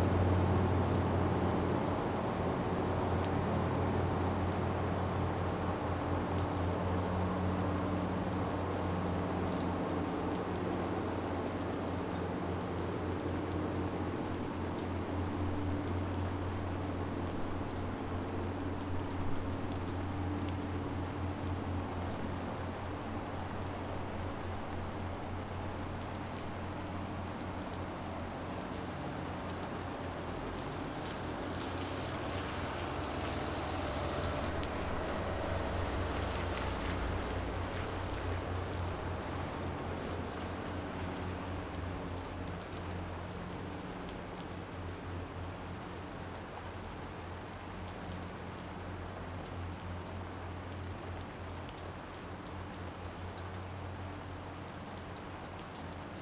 Maribor, Trg Leona Stuklja - cleaning machine
a loud and deep humming cleaning machine at work on the Leona Stuklja square.
(PCM D-50 internal mics)
Maribor, Slovenia, 31 July 2012, 23:25